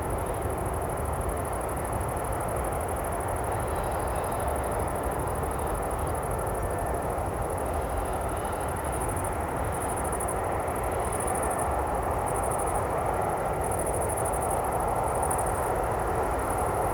Horní Jiřetín, Czech Republic - Distant mine with grasshoppers
Overlooking the huge Zámek Jezeří brown coal (lignite mine). The sound of a desolated landscape on a warm summer day.